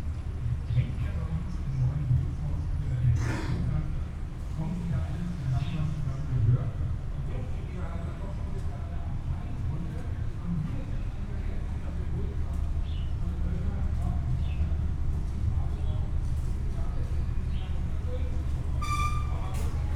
Berlin Treptower Park, narrow passage below pedestrian bridge, people and tourist boat passing-by.
(SD702, DPA4060)
Berlin, Germany, 18 October